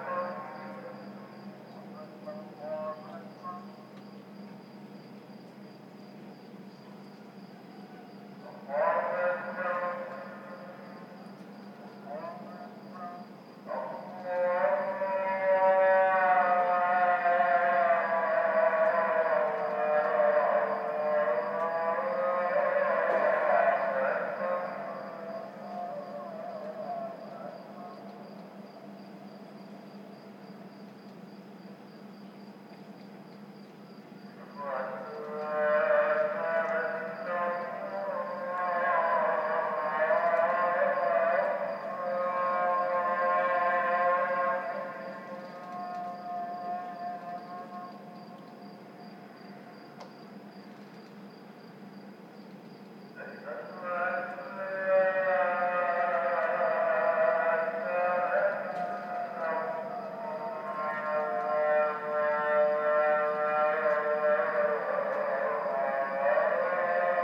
Marina Göcek, Turkey - 912 Muezzin call to prayer (evening)
Recording of a morning prayer call made from a boat.
AB stereo recording (17cm) made with Sennheiser MKH 8020 on Sound Devices MixPre-6 II.
Ege Bölgesi, Türkiye, 18 September 2022, ~05:00